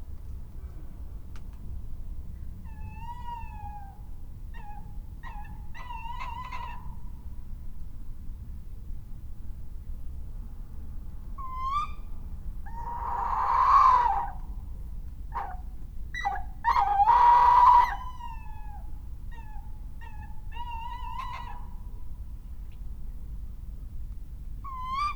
Recordings in the Garage, Malvern, Worcestershire, UK - Owls in the night
Last night at 01.34 owls are nearby and one flies into the birch tree about 8 metres from the recorder.
MixPre 6 II with 2 Sennheiser MKH 8020s
England, United Kingdom, October 2021